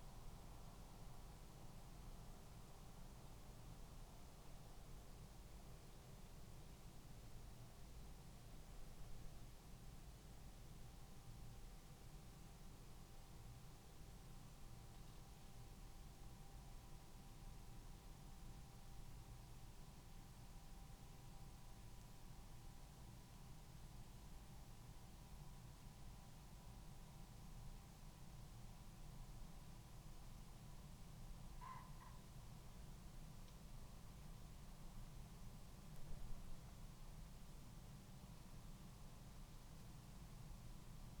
Luttons, UK - tawny owl soundscape ...
tawny owl soundscape ... song and calls from a pair of birds ... xlr mics in a SASS on a tripod to Zoom H5 ... bird calls ... song ... from ... lapwing ... wood pigeonm ... and something unidentified towards the end ... background noise ...